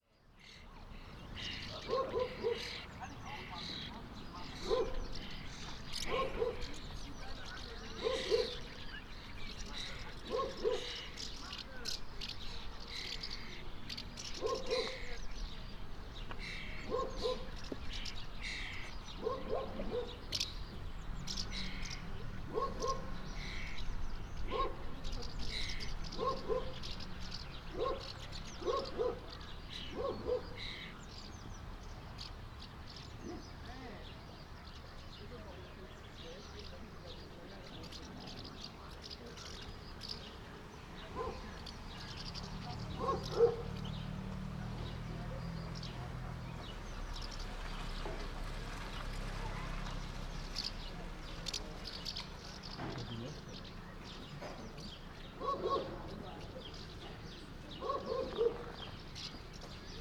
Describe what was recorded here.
on the iron brigde at the harbour tower, ambient. (pcm d50)